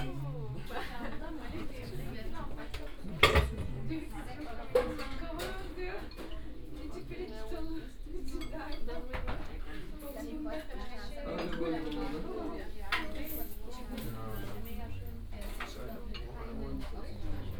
Sushi Bar, Kottbusser Damm, Berlin - lunch time, ambience
tiny sushi bar Musashi, ambience at lunch time
(PCM D50, OKM2 binaural)